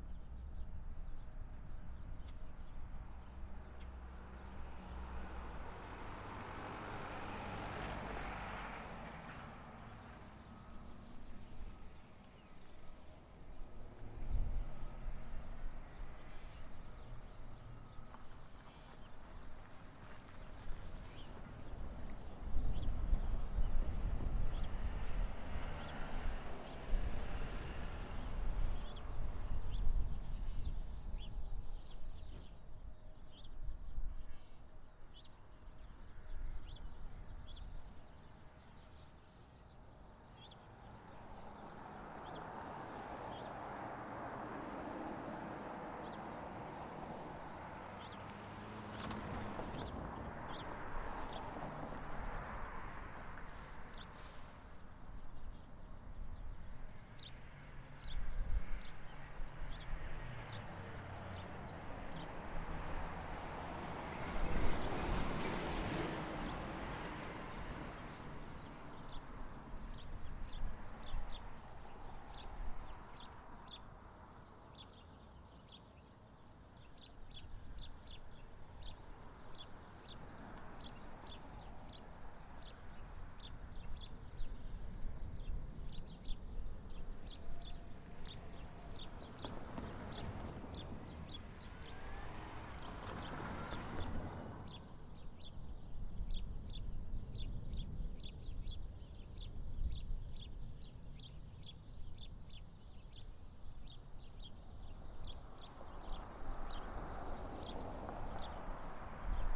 Ditmars Steinway, Queens, NY, USA - Birds In Trees With A Hilltop View of Laguardia Airport
Birds In Trees With A Hilltop View of Laguardia Airport